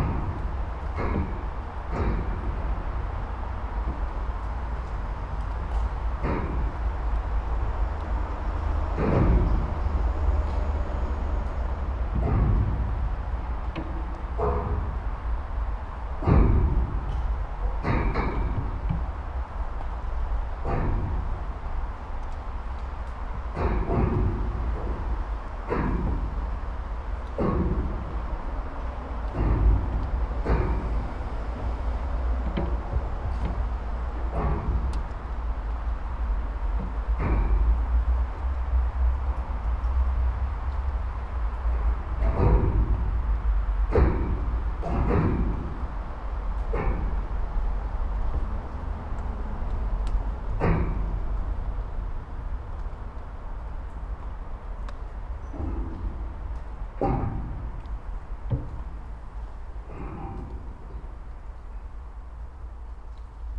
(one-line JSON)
{"title": "Vilnius, Lithuania, abandoned factory", "date": "2018-09-27 12:50:00", "description": "4 tracks at the abandoned factory: contact mics and omni", "latitude": "54.71", "longitude": "25.27", "altitude": "116", "timezone": "GMT+1"}